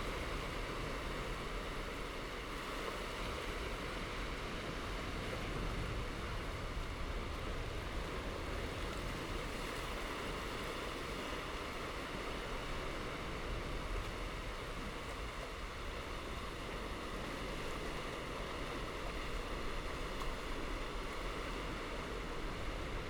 美崙溪, Hualien City - Sound of the waves
Sound of the waves
Binaural recordings
Zoom H4n+ Soundman OKM II + Rode NT4
Hualien County, Taiwan